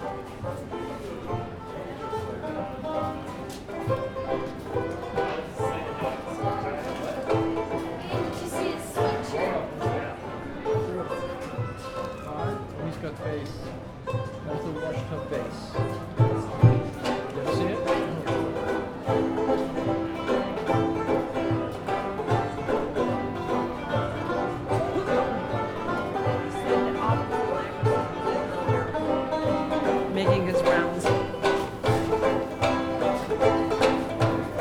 neoscenes: banjo in the background